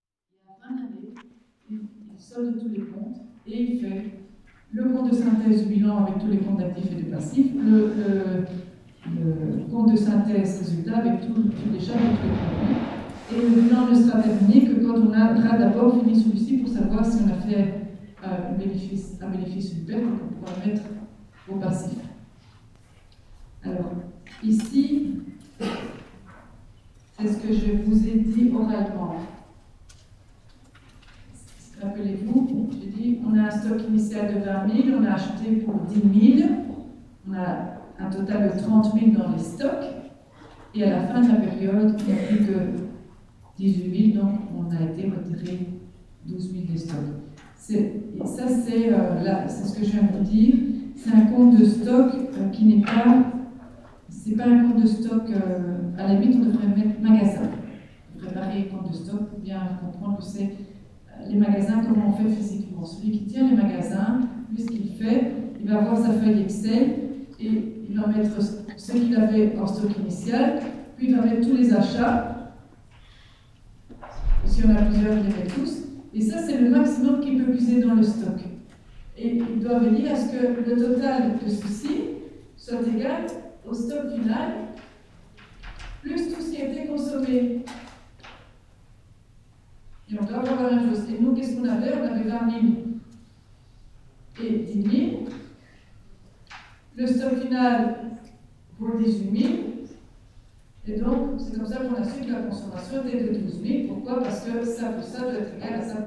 Ottignies-Louvain-la-Neuve, Belgique - A course of accounting
A course of accounting in the Agora auditoire.
Ottignies-Louvain-la-Neuve, Belgium, 11 March 2016